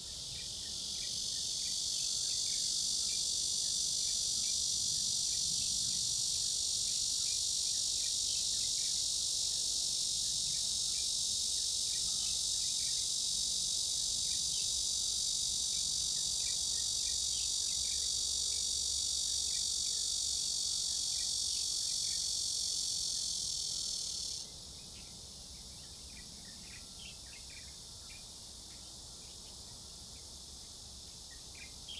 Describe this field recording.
Cicada cry, Bird call, Dog sounds, Near the airport runway, The plane took off, Zoom H2n MS+XY